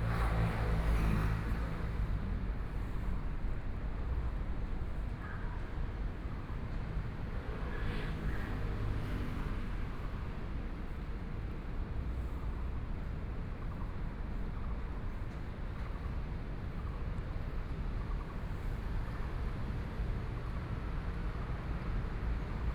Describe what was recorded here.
Parking lot, Environmental sounds